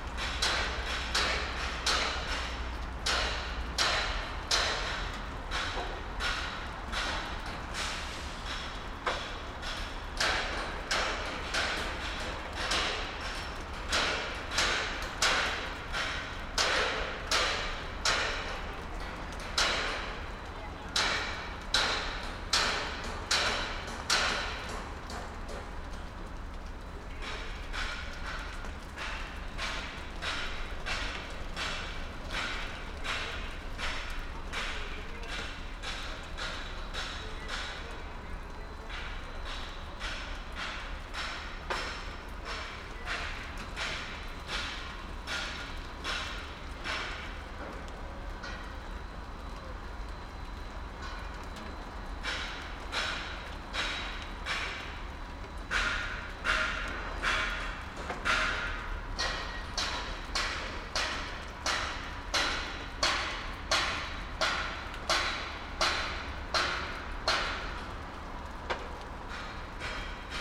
Weston Homes Reading Riverside Construction of 112 flats has been going on for a year. We as local residents over six years managed to get planning proposals overturned, but at the eleventh hour it went to central government and was approved. The lady inspector of development said that "it would have no effect on the local environment" Sony M10 with custom boundary array.